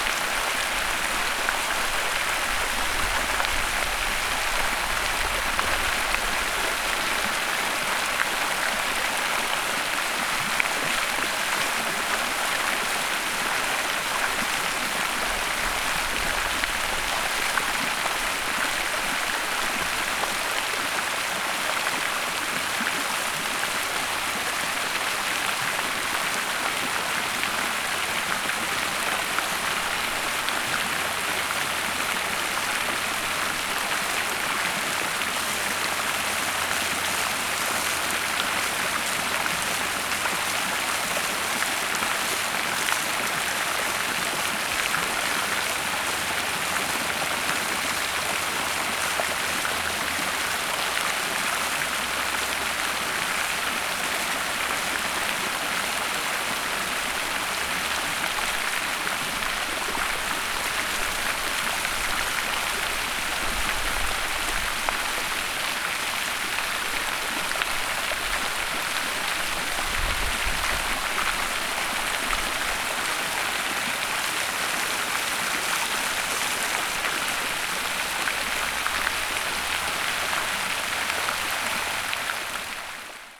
great Rundale manor, with botanical garden and fountain
12 September, 14:30